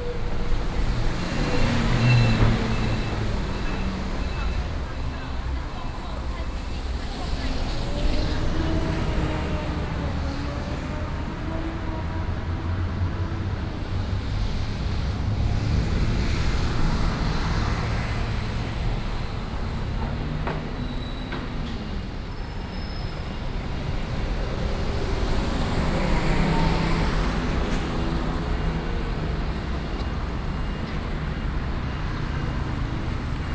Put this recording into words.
the mall, Walking in different shops, Traffic Sound